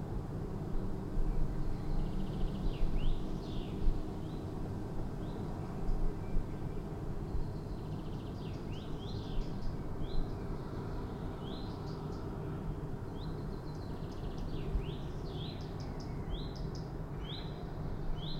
Taikos pr., Klaipėda, Lithuania - Soundscape on window